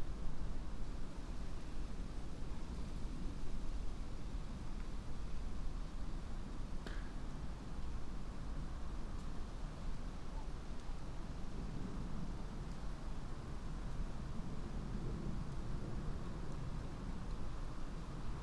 two trees, piramida - creaking trees
snow, birds, gentle wind, dry leaves, traffic noise beyond the hill ... and few tree creaks
Maribor, Slovenia